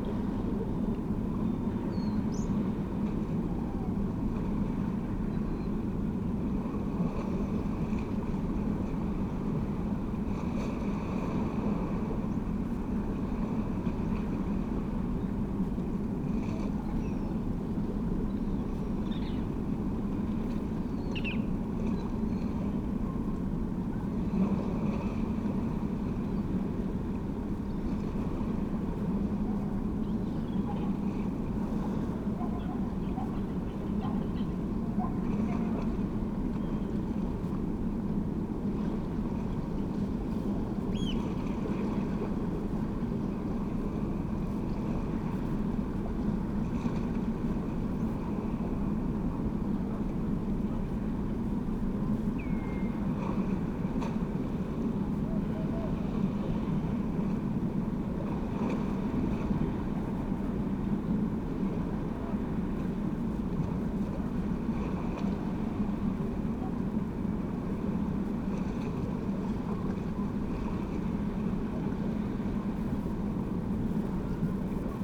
East Lighthouse, Battery Parade, Whitby, UK - drainage runnel ...
drainage runnel ... small gap in brickwork to allow rainwater run off ... purple panda lavs clipped to sandwich box to LS 14 ... bird calls ... oystercatcher ... herring gull ... redshank ... turnstone ... background noise ... footfall ... voices ...